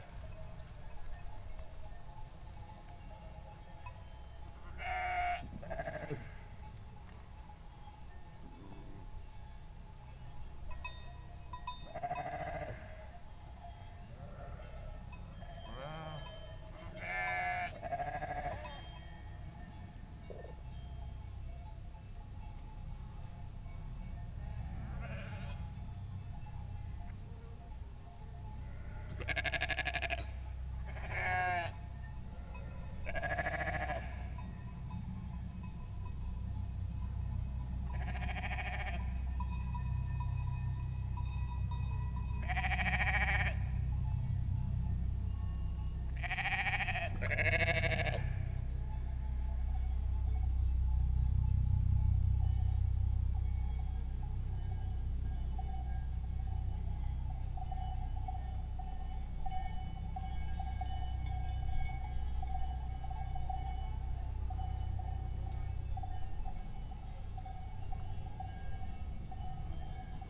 Lac Lautier - sheeps & Bells
Recording made on the shores of Lake Lautier (2350m) with a Roland R05 recorder